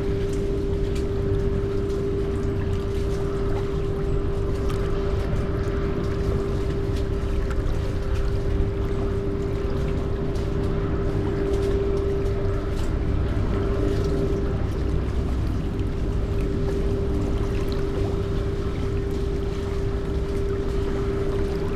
Plänterwald, Berlin, Germany - where water can smoothly overflow
river Spree and her free flowing waves over concrete surface, cement factory working, wind in high trees
Sonopoetic paths Berlin